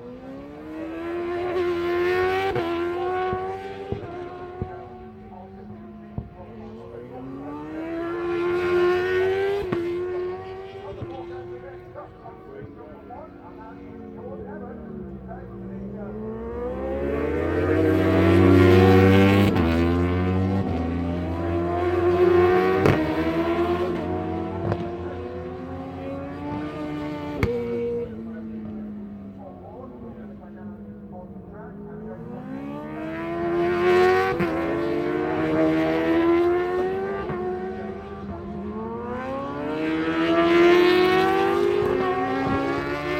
Unit 3 Within Snetterton Circuit, W Harling Rd, Norwich, United Kingdom - british superbikes 2006 ... superbikes ...
british superbikes 2006 ... superbikes free practice ... one point stereo mic to minidisk ...
17 June 2006, 11:00